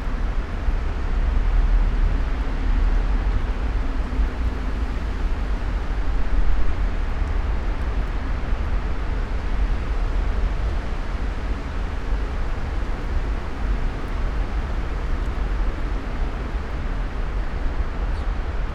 Grünstraßenbrücke, Mitte, Berlin, Germany - rain stops
river Spree, small corner with steps, two under one umbrella
Sonopoetic paths Berlin